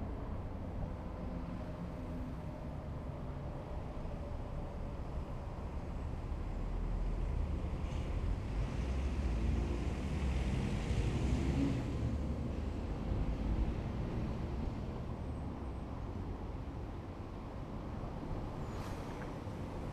Berlin Wall of Sound, Erich-Keller-Bruecke over Teltowkanal and highway 080909
Germany